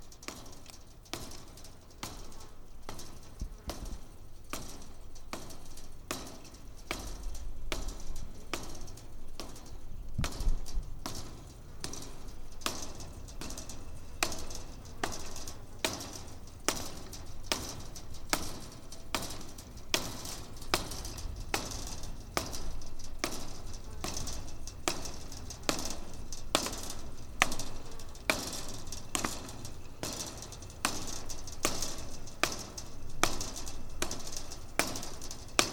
Dorset AONB, Dorchester, Dorset, UK - fence
Contact mics on a wire fence.
29 June 2015, 11:30